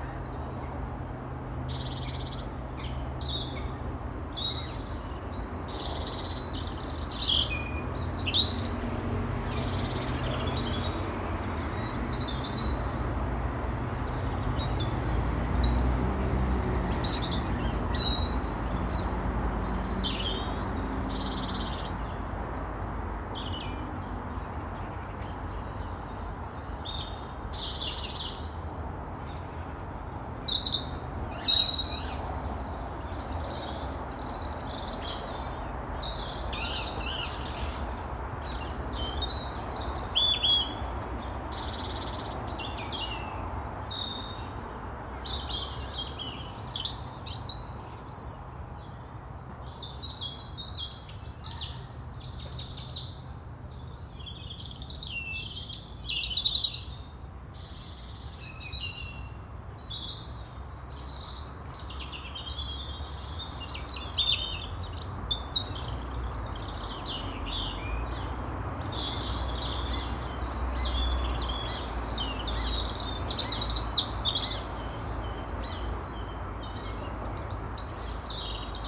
Leg O Mutton park (London) - Leg O Mutton park
Sony PCM D100. Leg O Mutton park near Thames path. Lots of birds including parakeet that live nearby. As it is London there is also some traffic in the background. Sonically interesting helicopter fly-by.